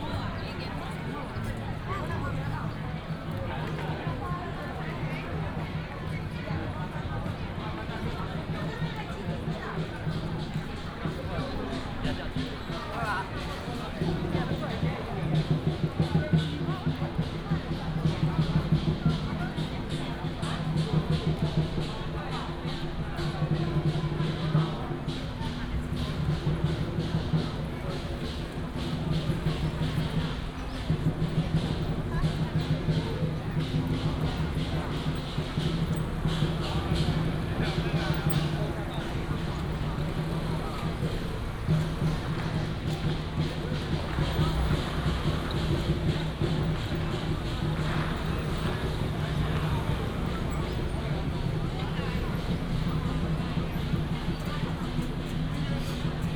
Baixi, Tongxiao Township 苗栗縣 - Traditional temple fair
Matsu Pilgrimage Procession, Crowded crowd, Fireworks and firecrackers sound